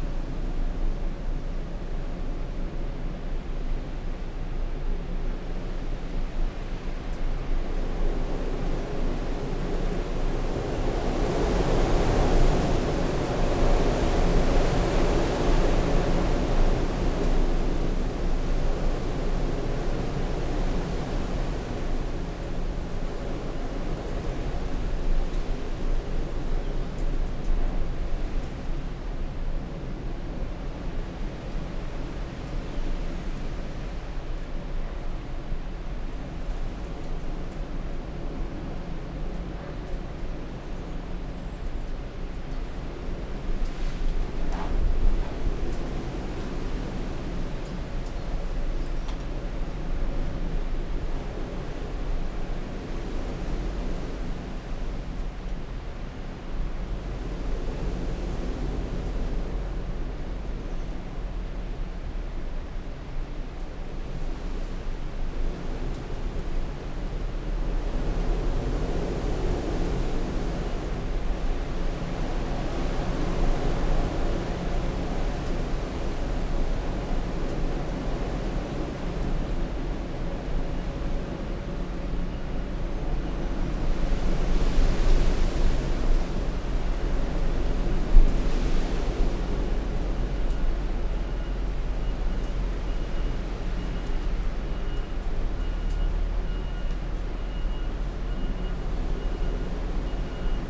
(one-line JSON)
{"title": "Unnamed Road, Malton, UK - inside church porch ... outside storm erik ...", "date": "2019-02-09 07:20:00", "description": "inside church porch ... outside .. on the outskirts of storm erik ... open lavaliers on T bar on tripod ... background noise ... the mating call of the reversing tractor ...", "latitude": "54.12", "longitude": "-0.54", "altitude": "84", "timezone": "Europe/London"}